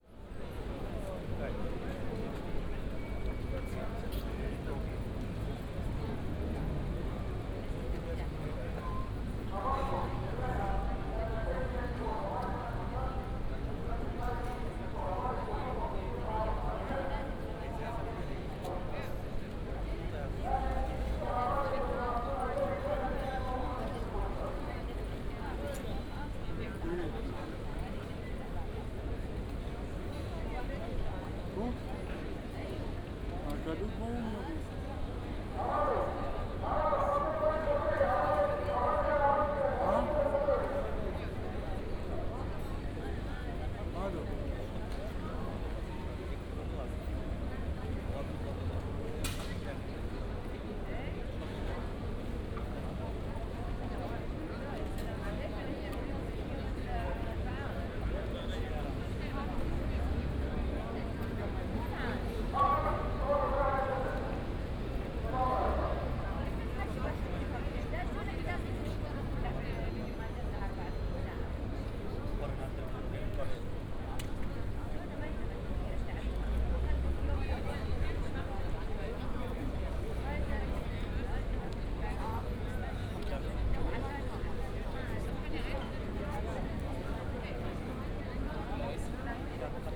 Willy-Brandt-Platz, Essen - square ambience
outside of a cafe at Willy-Brandt-Platz, near main station, Essen
(Sony PCM D50, OKM2)
20 September 2014, 15:10